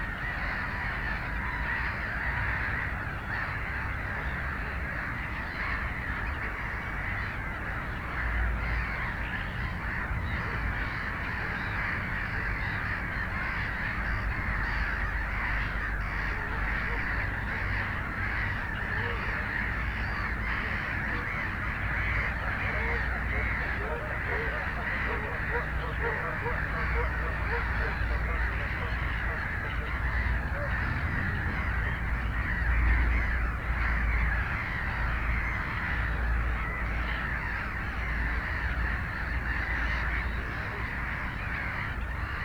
berlin, alt-heiligensee: nieder neuendorfer see (havel) - the city, the country & me: canada geese
canada geese at lake nieder neuendorfer see (a part of the havel river)
the city, the country & me: march 17, 2012
Berlin, Germany, 17 March 2012, 21:51